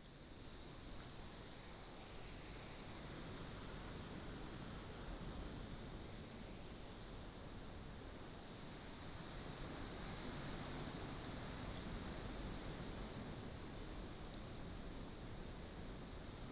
燕子洞, Lüdao Township - Inside the cave
the waves, Inside the cave, birds